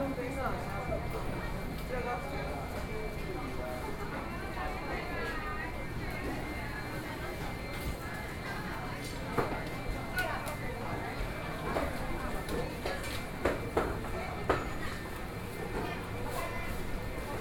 小港區三苓里, Kaohsiung City - Traditional Market
Walking in traditional markets